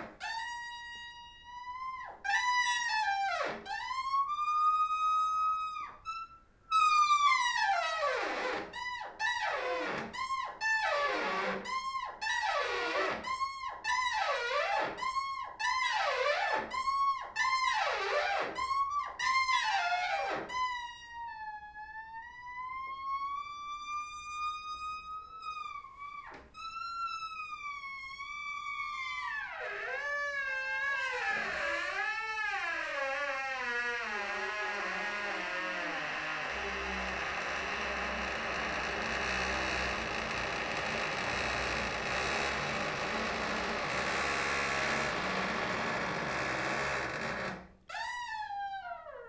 doors screeching, hotel opera, Linz
doors screech free-jazz